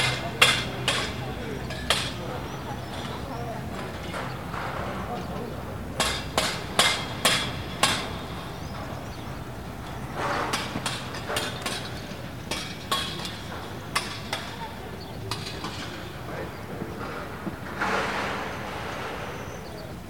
Place du Capitole, Toulouse, France - Work in Progress
Work in Progress, Bird, trafic car, Metallic Sound
captation Zoom H4n4
France métropolitaine, France, 5 May 2021